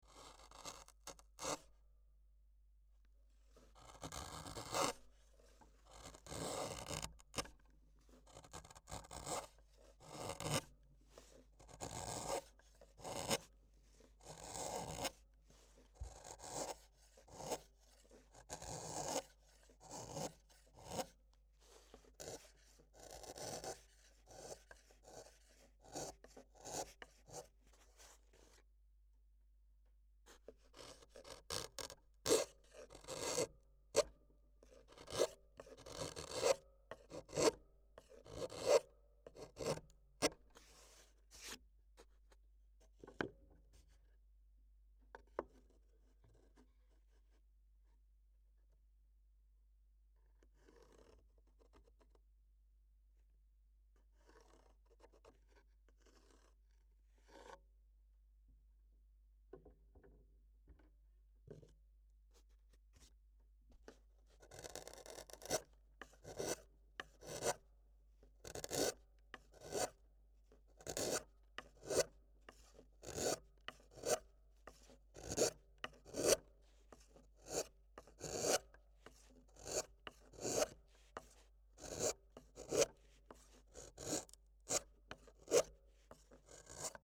Landratsstraße, Laufen, Deutschland - Violin Builder Carving A Viola
At the violin builder's workshop with two contact mics glued under his working table. Audible: Carving tool, chipped wood, resonating screw clamps, drawing with a pencil.
Recorded with two AKG C411, one panned 100% to L, the other one to R. Attached to RME UC Interface, hooked up with Ableton Live.